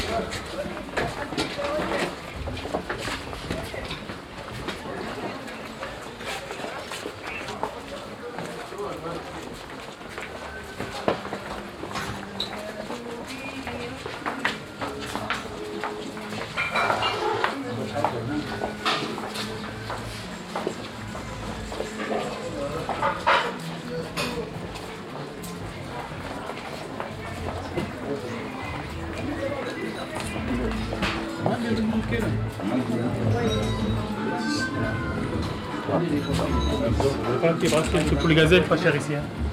Souks, Tunis, Tunesien - tunis, medina, souks, soundwalk 01
Entering the Souks in the morning time. The sound of feets walking on the unregualar stone pavement, passing by different kind of shops, some music coming from the shops, traders calling at people and birds chirp in cages.
international city scapes - social ambiences and topographic field recordings
Tunis, Tunisia, 3 May 2012